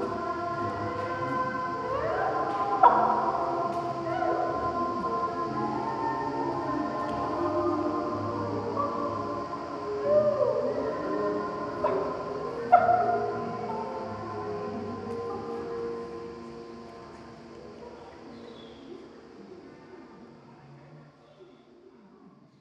Venedig, Italien - Venice Biennale - British Pavillion
At the Venice Biennale 2022 inside the british pavillion. The sound of black female voices in an installation work " Feeling her way" by Sonia Boyce - feat. Errollyn Wallen, Jacqui Dankworth, Poppy Ajudha, Sofia Jernberg and Tanita Tikaram.
international ambiences
soundscapes and art environments